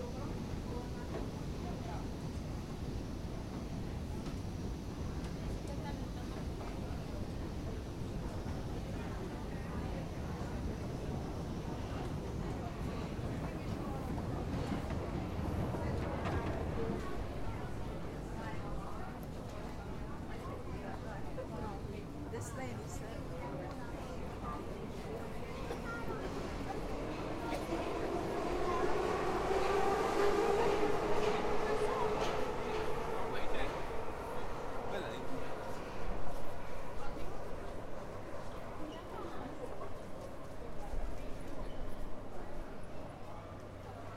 Budapest, Deak Ferenc Ter Metro Station - Escalator
A walk by an escalator to the platform of the metro station of line M3, a train is leaving, another escalator is audible. The old ones are faster in Budapest than in most cities.
4 December, ~15:00